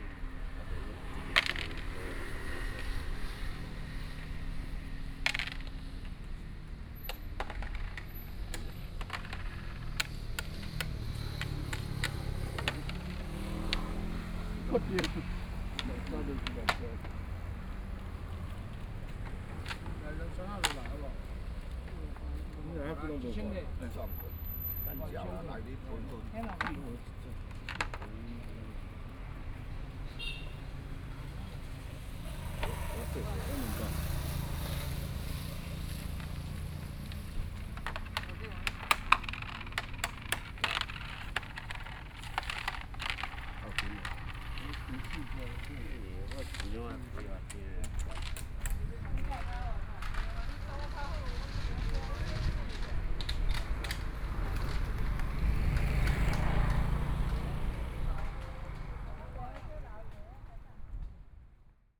羅東運動公園, Luodong Township - Under the tree
Under the tree, Hot weather, Traffic Sound, A group of people playing chess
Sony PCM D50+ Soundman OKM II